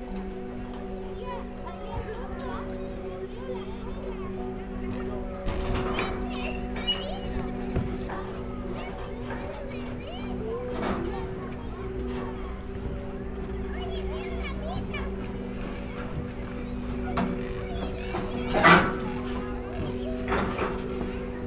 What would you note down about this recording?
Viña del Mar, laguna Sausalito, sound performance for 16 instruments on pedal boats, by Carrera de Música UV and Tsonami artists, (Sony PCM D50, DPA4060)